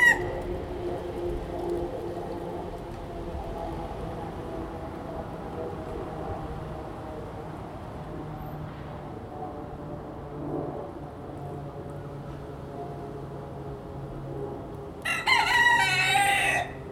Binckhorst, L' Aia, Paesi Bassi - Binckhorst's cock guarding
The cock was being pretty loud, the wind as well. You can also hear a plane flying, a scooter, a car and a train going by. I used my Zoom H2n.
Nederland, European Union, 23 March 2013, 14:24